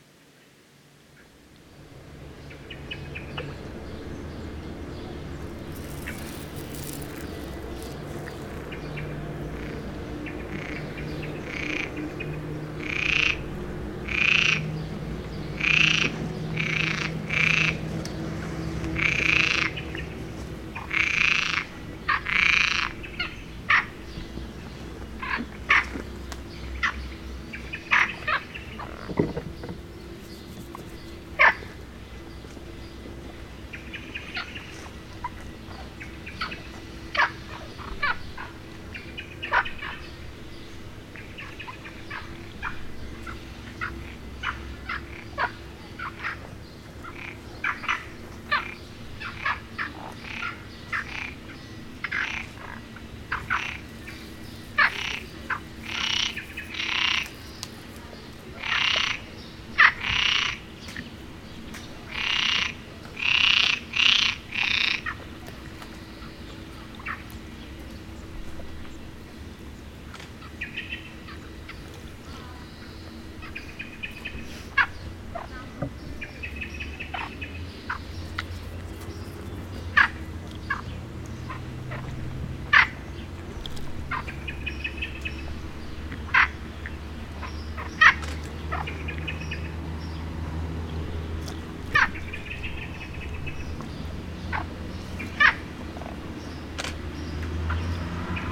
Av. Salgueiro Maia, Melgaço, Portugal - ATLAS I Melgaço - Charca
Charca da Escola Secundária de Melgaço
sound workshop